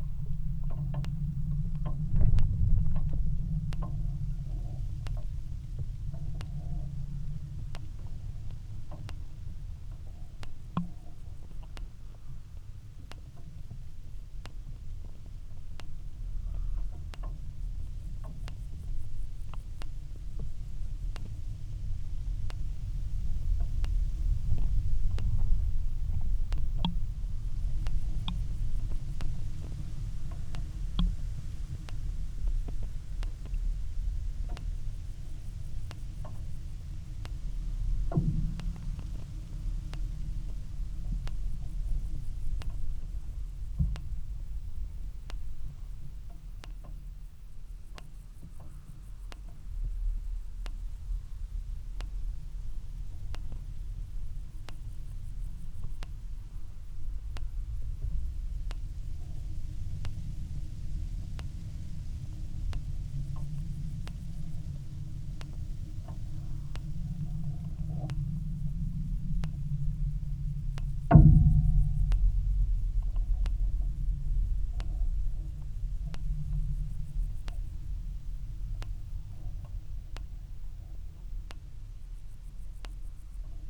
{"title": "Vyzuonos, Lithuania, electric fencing", "date": "2017-08-06 17:40:00", "description": "4 channels recording at the electric fencing system. surrounding soundscape and clicks and drones caoptured by contact microphones", "latitude": "55.57", "longitude": "25.51", "altitude": "94", "timezone": "Europe/Vilnius"}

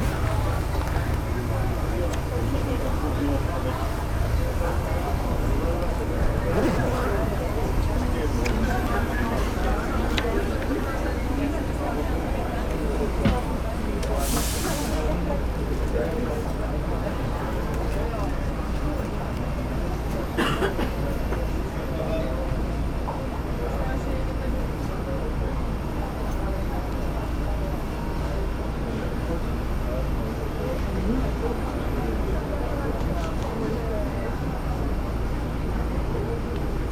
{"title": "Madeira, Aeroporto da Madeira - walkie-talkie", "date": "2015-05-19 17:27:00", "description": "crack of a walkie-talkie left on a counter. passengers are instructed which part of plane to board. crowd mumbling and oozing towards the bus.", "latitude": "32.69", "longitude": "-16.78", "altitude": "54", "timezone": "Atlantic/Madeira"}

